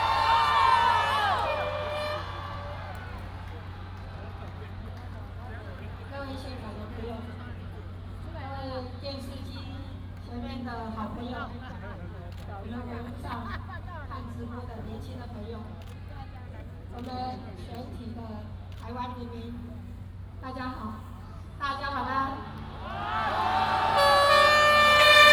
Beiping E. Rd., Taipei City, Taiwan - Speech
by democratic elections, Taiwan's first female president